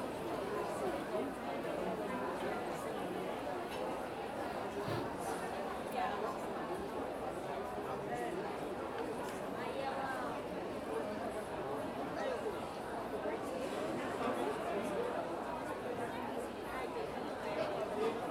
Av. Paulista - Cerqueira César, São Paulo - SP, 01310-928, Brasil - praça de alimentação - Shopping Center 3
#food #people #alimentacao #sp #saopaulo #brazil #br #consolacao #avenida #paulista #voices